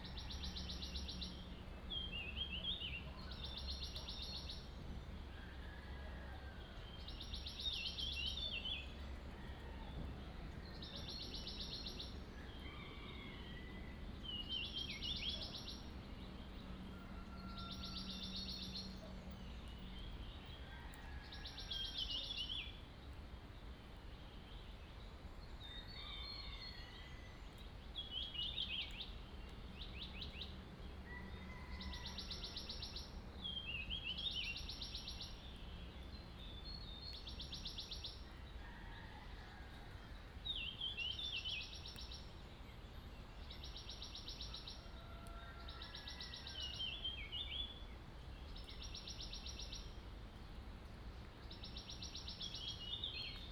Shuishang Ln., Puli Township - Bird sounds
Bird sounds, Crowing sounds, Morning road in the mountains